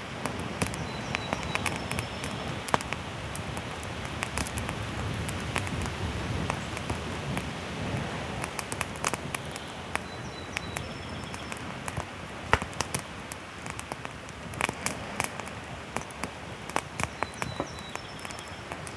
bonfire-sea-birds, White Sea, Russia - bonfire-sea-birds
bonfire-sea-birds.
Треск костра, шум морских волн, пение птиц в лесу.